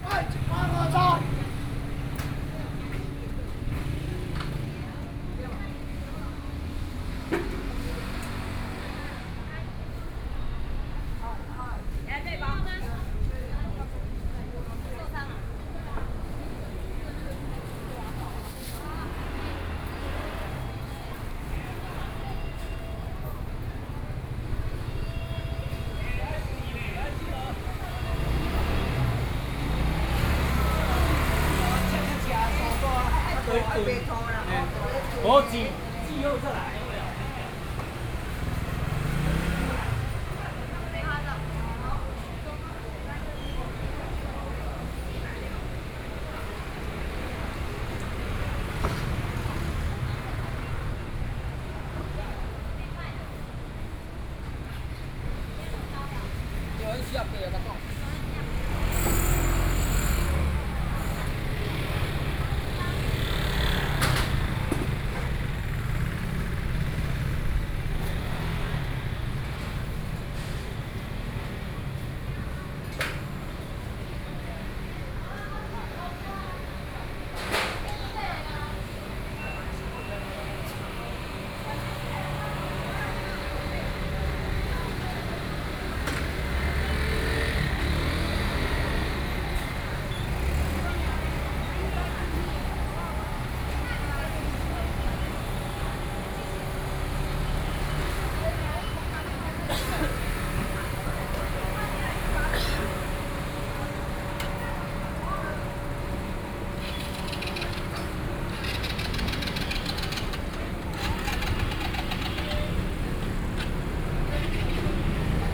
Heping Rd., 羅東鎮仁和里 - Walking in the traditional market
Walking through the traditional market, Traffic Sound
Sony PCM D50+ Soundman OKM II
Yilan County, Taiwan, 27 July 2014